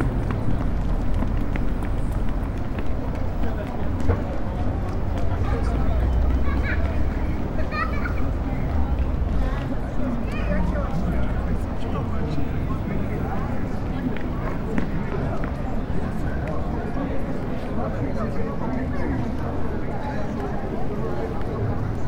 Caminhada - Centro, Londrina - PR, Brasil - Calçadão: caminhada sonora 23/05/16
Caminhada sonora realizada e gravada no Calçadão de Londrina, Paraná.
Categoria de som predominante: antropofonia (vozes, veículos, anúncios, músicos de rua, vendedores ambulantes...).
Condições do tempo: ensolarado, vento, frio.
Hora de início: 14h17.
Equipamento: Tascam DR-05.
Soundtrack performed and recorded on the Boardwalk in Londrina, Paraná.
Predominant sound category: antropophony (voices, vehicles, advertisements, street musicians, street vendors ...).
Weather conditions: sunny, wind, cold.
Start time: 2:17 p.m.
Londrina - PR, Brazil, 23 May 2016